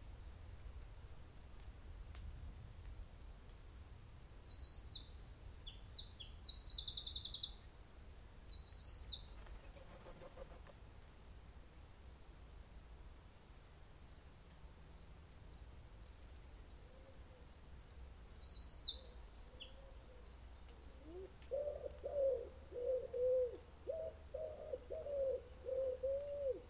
Haldon Belvedere, Exeter, UK - Haldon Belvedere- Devon Wildland
This recording was made using a Zoom H4N. The recorder was positioned in the bracken and rowan and beech woods just to the North of the Haldon Belvedere- Lawrence Castle. It had just stopped raining. This recording is part of a series of recordings that will be taken across the landscape, Devon Wildland, to highlight the soundscape that wildlife experience and highlight any potential soundscape barriers that may effect connectivity for wildlife.